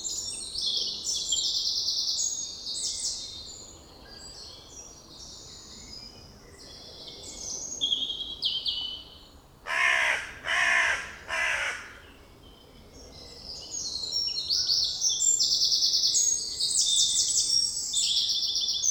Mont-Saint-Guibert, Belgique - In the woods
Recording of the birds singing in the forest, on a spring sunday morning. It's not exactly a forest but a small wood in a quite big city, so there's a lot of distant noises : trains, cars, planes. I listed, with french name and english name (perhaps others, but not sure to recognize everybody) :
Fauvette à tête noire - Eurasian Blackcap
Rouge-gorge - Common robin
Merle noir - Common blackbird
Pigeon ramier - Common Wood Pigeon
Mésange bleue - Eurasian Blue Tit
Mésange charbonnière - Great Tit
Corneille noire - Carrion Crow
Pic vert - European Green Woodpecker
Choucas des tours - Western Jackdaw